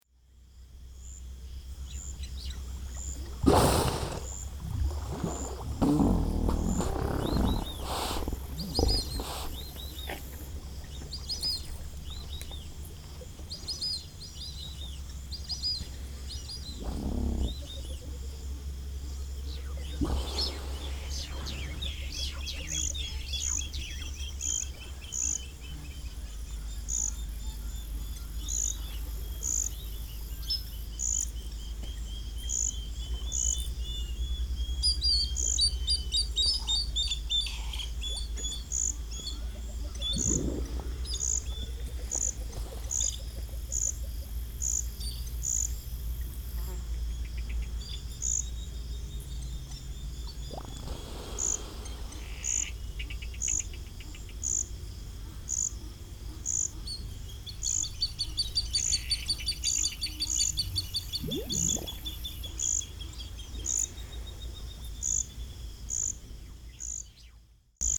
Mikumi National Park, Tanzania - Action at the Hippo Pool
On safari in Tanzania, recorded on Minidisc
December 2006